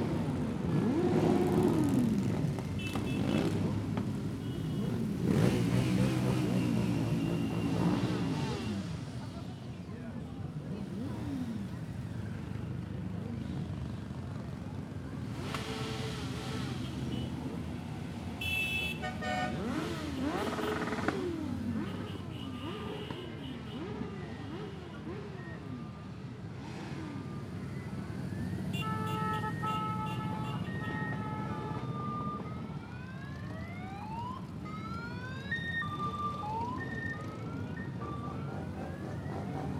Leba, city center, bridge over Leba canal - biker's parade
a numerous group of bikers cursing around the city, cranking up the engines, spinning wheels, sputtering the exhaust pipes, showing off. crowds cheering the bikers.